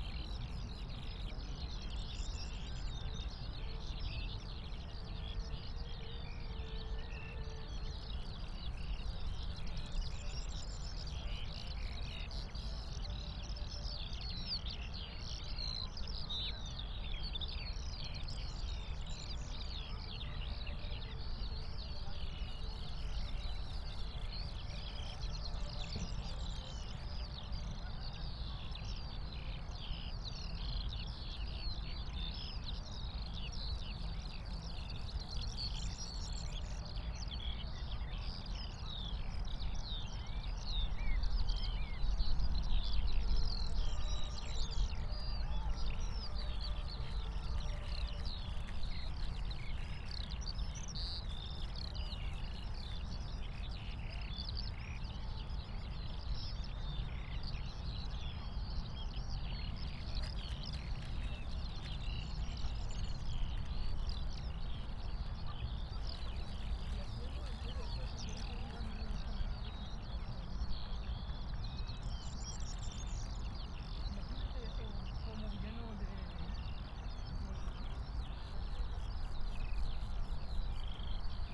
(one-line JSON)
{"title": "Tempelhofer Feld, Berlin - skylarks and others", "date": "2020-06-21 21:10:00", "description": "sunset, open meadows with high grass, Eurasian skylarks (Alauda arvensis), common kestrel (Falco tinnunculus), corn bunting (Emberiza calandra) among others. People passing-by. Various anthropophonic sounds in the distance.\n(SD702, MKH8020)", "latitude": "52.47", "longitude": "13.40", "altitude": "44", "timezone": "Europe/Berlin"}